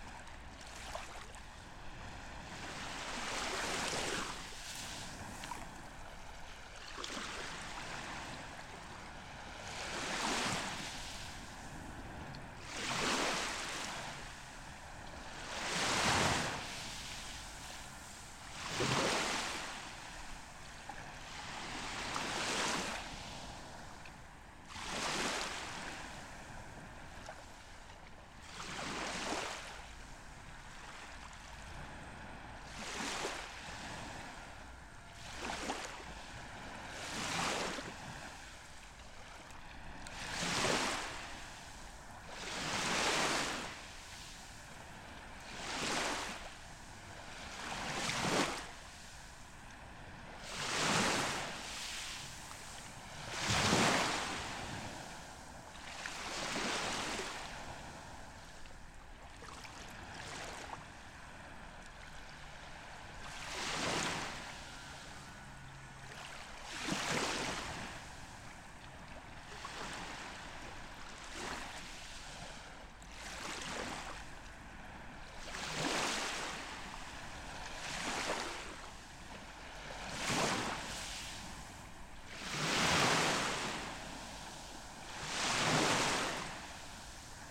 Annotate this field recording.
recording morning sea just right at the sea:)